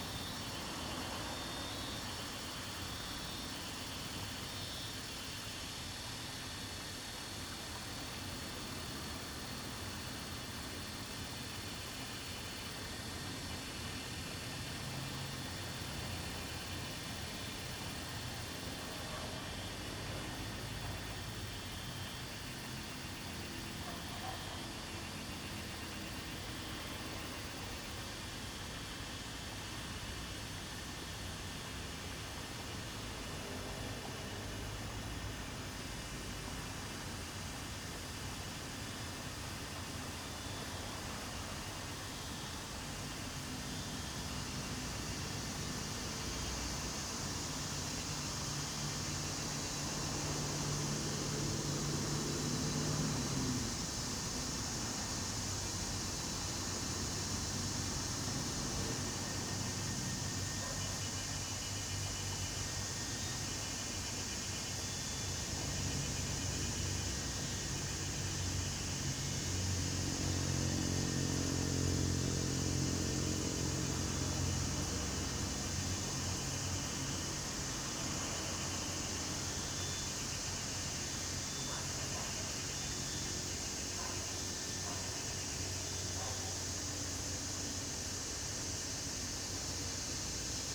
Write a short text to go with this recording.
Cicadas cry, In the stream, Near Parking, Zoom H2n MS+XY